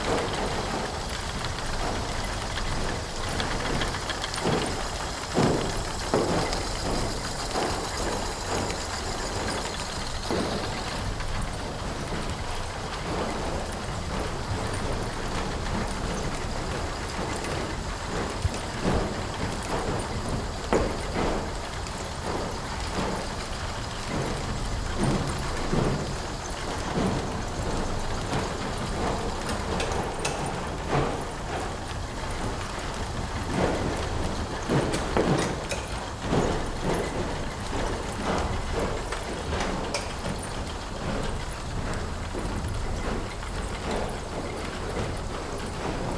ratingen, cromforder allee, cromford museum
water frame - mehrteilige webanlage, sukzessive ein und ausgeschaltet - im industrie museum cromford - im hintergrund der zentrale wasserantrieb
soundmap nrw
topographic field recordings and social ambiences